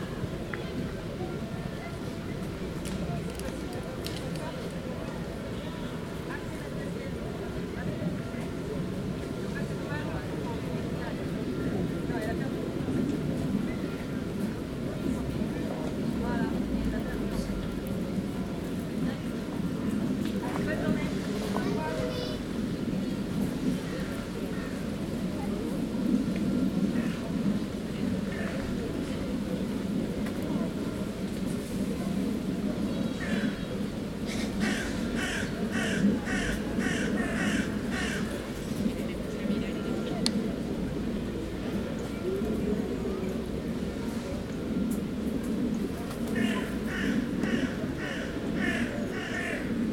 Jardin du Luxembourg - St Sulpice bells from the Roseraie garden
end of the mass bells at noon, with children playing in the sandbox, crows, tennismen, and a plane in the background